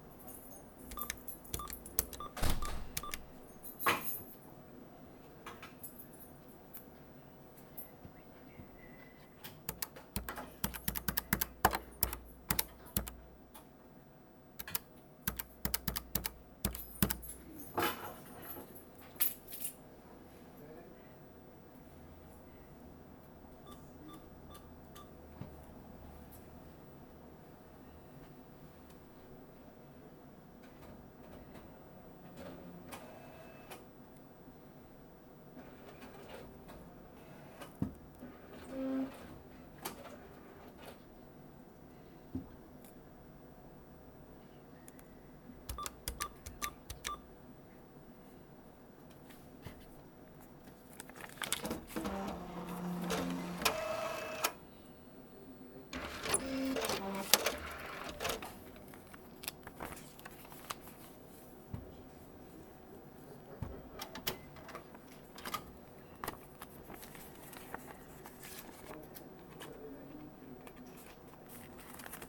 Court-St.-Étienne, Belgique - Fortis bank
Paying Anders, in Norway, in aim to buy a binaural microphone ;-) In first, you can hear my bank extracts. People are entering and doing the same near me. After, you can here me doing the payment. This sound is very common here in Belgium because banks are clearly unfriendly and we have to do everything by ourselves.